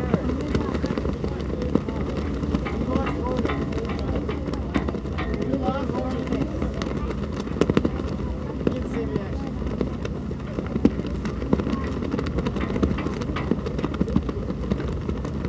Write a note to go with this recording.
Recording made in the upper deck of the Staten Island Ferry - the Hurricane Deck. Sounds of the american flag flapping in the wind.